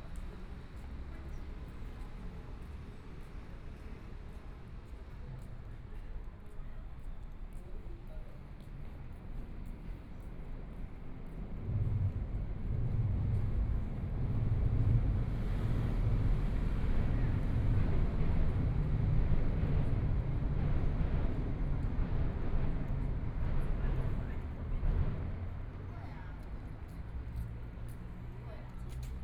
Xinbeitou Branch Line, Taipei - Walking beneath the track
Walking beneath the track, from MRT station, Traffic Sound, Motorcycle Sound, Trains traveling through, Clammy cloudy, Binaural recordings, Zoom H4n+ Soundman OKM II
Taipei City, Taiwan